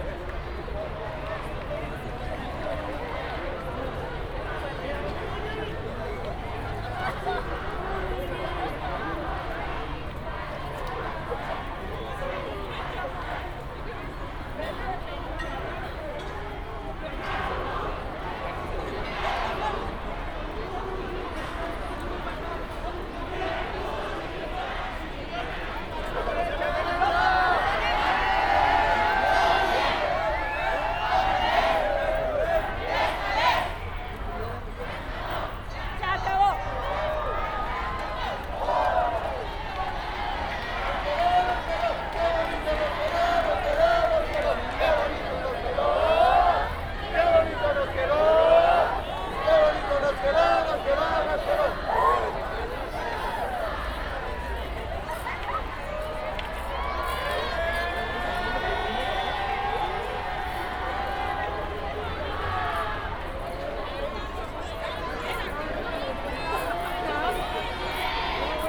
Luis Moya, Centro Histórico de la Cdad. de México, Centro, Cuauhtémoc, Ciudad de México, CDMX, Mexiko - Boys Scouts Parade
Working on our (O+A) installation "Resonating Mexico City we encountered a Boy Scouts Parade next to our venue at Laboratorio Arte Alameda